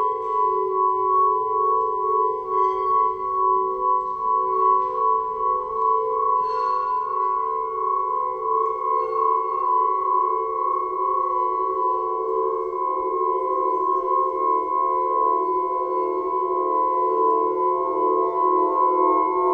Fragment from the sound performance of Dan Senn at the Trafačka new music festival Echoflux. The lydes are played by Dan Senn, Anja Kaufman, Petra Dubach, Mario van Horrik and George Cremaschi.